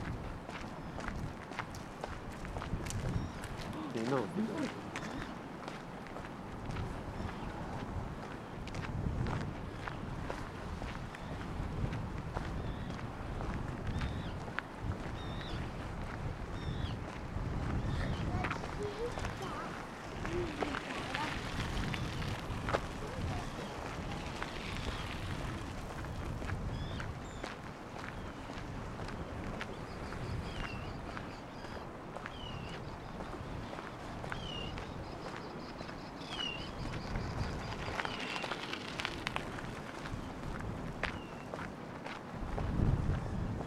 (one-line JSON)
{"title": "Latvia, Ventspils, walking on pier", "date": "2012-08-14 13:25:00", "latitude": "57.40", "longitude": "21.52", "altitude": "1", "timezone": "Europe/Riga"}